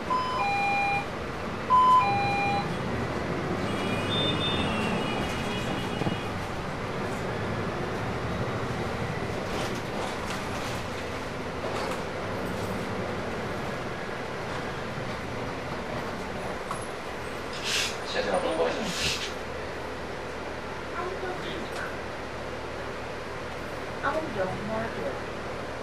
Taipei, Bus 263 broadcasting System
11 July 2009, 09:32